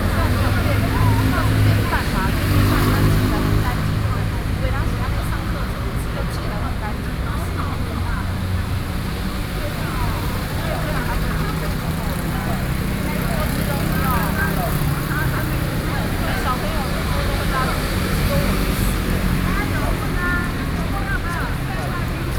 Sec., Zhongyang N. Rd., Beitou Dist., Taipei City - In the square
Taipei City, Taiwan, 3 July 2012